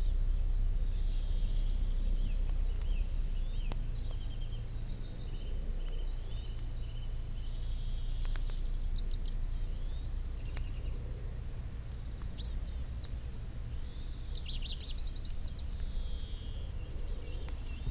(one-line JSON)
{"title": "Bridge Villa Camping, Crowmarsh Gifford, Wallingford, Oxfordshire, UK - Dozing and waking in the tent near the A4074", "date": "2010-06-13 08:00:00", "description": "Made this recording in 2010 when I was doing a lot of exploratory walks around the A4074 road, trying to get closer to the landscape which I am often separated from by my car when I am driving on the road there. I did the walk in two parts, starting in Reading, and taking the footpaths around the A road as it is far too dangerous to walk directly on the road the whole way. I camped overnight in Wallingford on the night of the first day of walking, then met Mark early on, and walked the rest of the way into Oxford. I set up my recorder in the tent before I went to sleep, ready to record the early morning birds. Woke up, put it on, then fell back asleep. In this recording I am dozing with the birds and there is an aeroplane, a little light rain, and some tiny snoring. It was close to 8am if I remember rightly. Just recorded with EDIROL R-09 and its onboard microphones. I kept in the part at the end where I wake up and turn off the recorder!", "latitude": "51.60", "longitude": "-1.12", "altitude": "47", "timezone": "Europe/London"}